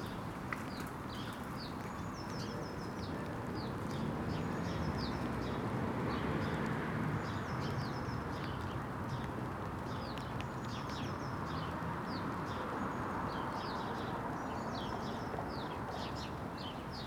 Contención Island Day 65 outer north - Walking to the sounds of Contención Island Day 65 Wednesday March 10th
The Poplars High Street Salters Road Elsdon Road Henry Street Hedley Terrace
Tucked into the laurel
as the rain begins
to the chat of sparrows
On the seventh floor
workmen shout
as they hand down planks
On a far skyline
jackdaws dot and shuffle